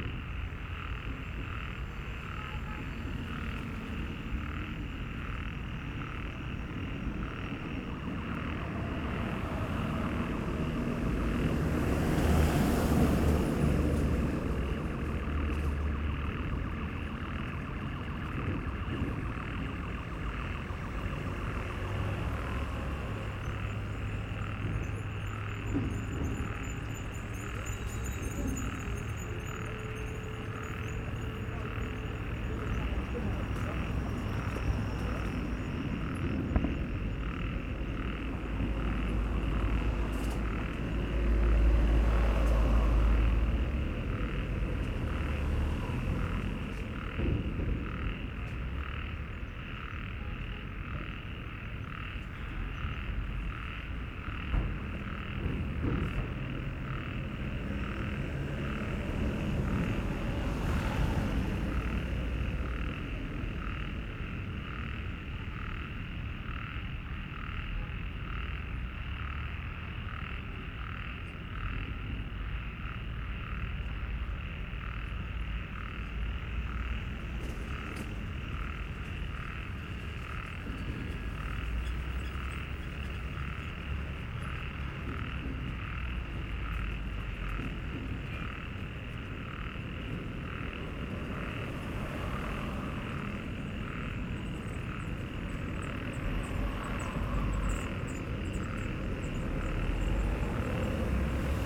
Shenglian Rd, Baoshan Qu, Shanghai Shi, China - Frogs in industrial area
Frogs in a small stream are singing, discontinuously. Busy traffic in the back. Distant heavy construction work with alarm sounds
Des grenouilles dans un ruisseau chantent, sans interruption. Bruit de trafic derrirère l’enregistreur. Bruit de chantier lointain, et son d’alarme
25 May 2017, 19:05